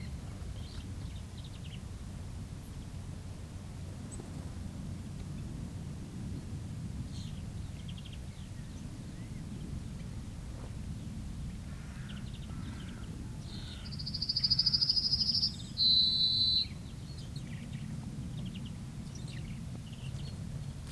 Ottignies-Louvain-la-Neuve, Belgique - Yellowhammer
Walking threw the wheat fields, the song of the Yellowhammer. In our area, it's the very symbolic bird song of an hot summer in beautiful fields.
16 July 2017, 12:15